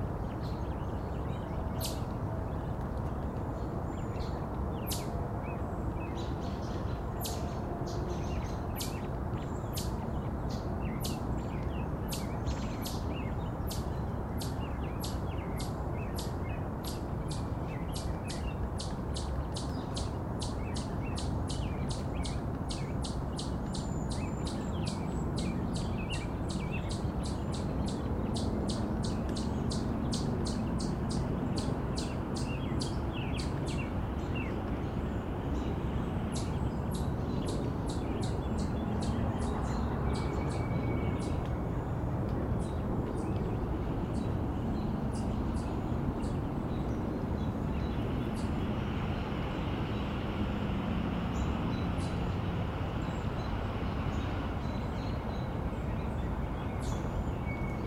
Area around Blackwell Forest Preserve
Birds, fisherman, wind, water